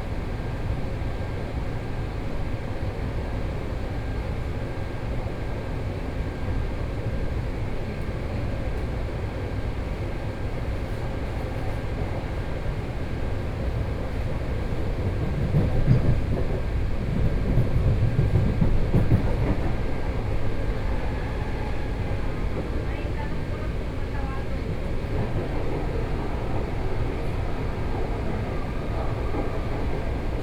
Beitou, Taipei City - MRT
Inside the MRT, from Fuxinggang Station to Qiyan Station, Sony PCM D50 + Soundman OKM II